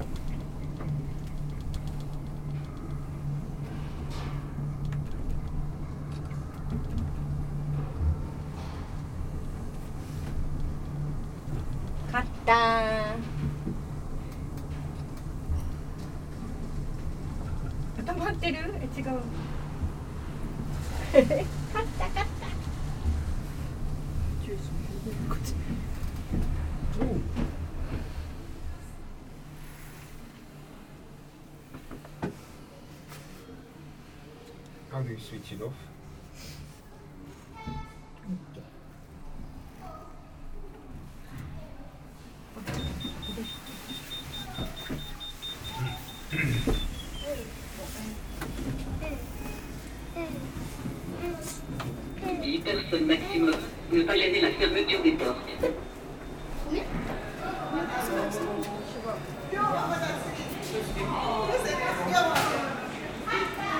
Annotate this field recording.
Using the funicular located in the city called Le Tréport. Its a huge funicular using small funny cabins. Everything is free, you can use it as a lift and theres a great view. During this recording, people wait and gradually, we embark in the funicular.